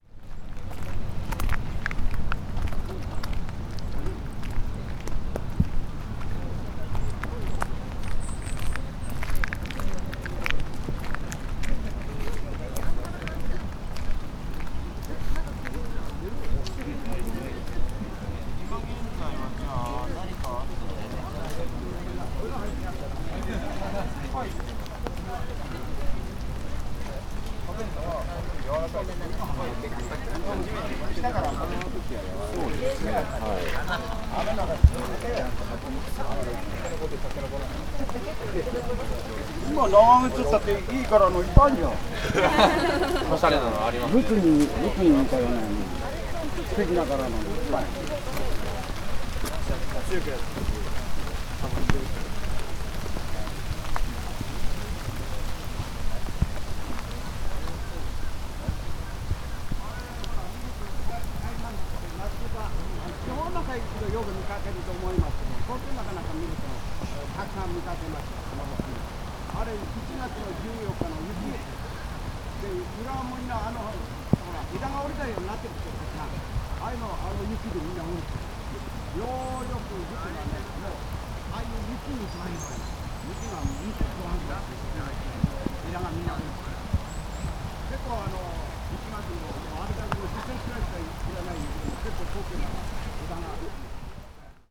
Tokio, Chiyoda district, Imperial Palace East Garden - japanese excursion
passing by a group of Japanese tourists visiting the gardens, heavy rain.